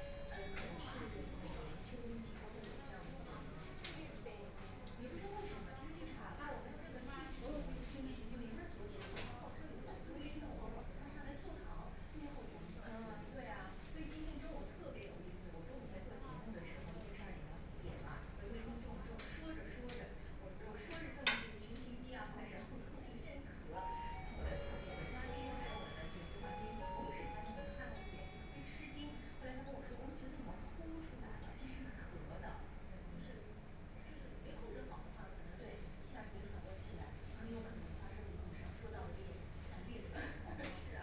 South Station Road, Shanghai - In the convenience store inside
In the convenience store inside, Radio program sound, Voice prompts to enter the store when, Binaural recording, Zoom H6+ Soundman OKM II
Shanghai, China, 28 November 2013